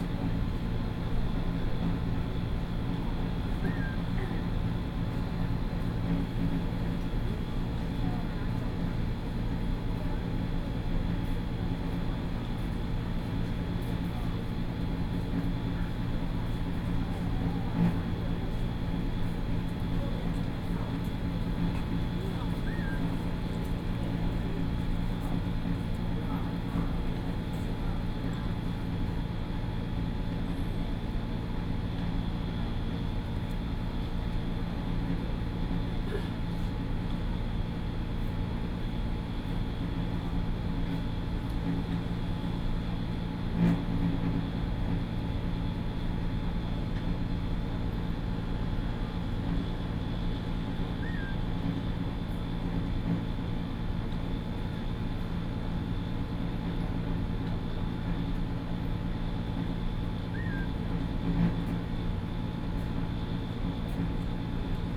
Zhongli Station - On the platform
Noise air conditioning unit, Mobile voice, Sound broadcasting station, By train arrived and the exerciseSony, PCM D50 + Soundman OKM II
Taoyuan County, Taiwan, 16 September 2013